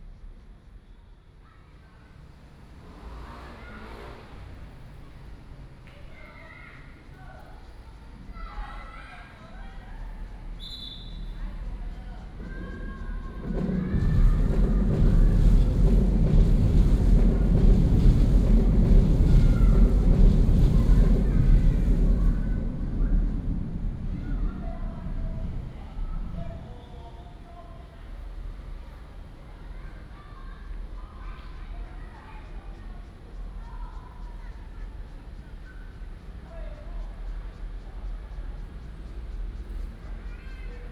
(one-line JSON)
{"title": "Sec., Beitou Rd., Beitou Dist., Taipei City - MRT train sounds", "date": "2015-07-30 19:03:00", "description": "under the track, MRT train sounds\nPlease turn up the volume a little. Binaural recordings, Sony PCM D100+ Soundman OKM II", "latitude": "25.13", "longitude": "121.50", "altitude": "10", "timezone": "Asia/Taipei"}